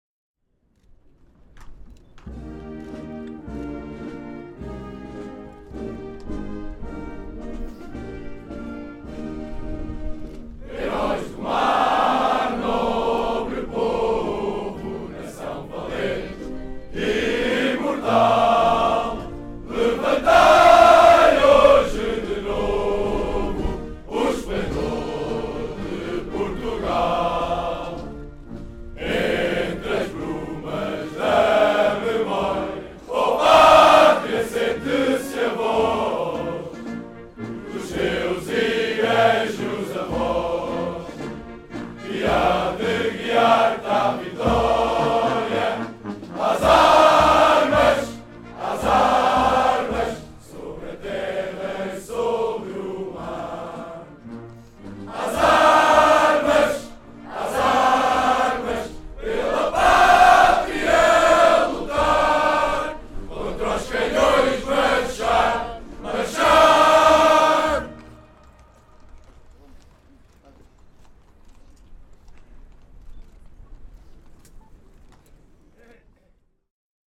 Parque Eduardo VII, Lisboa, Portugal - Portuguese National Anthem sung by military and civilians
Parque Eduardo VII, Lisbon's Park near downtown.
The Portuguese National Anthem sung by students from the military school, while on formation and the bystanders who were watching.
Recorded with Zoom H6n using the XY capsule with wind foam protection.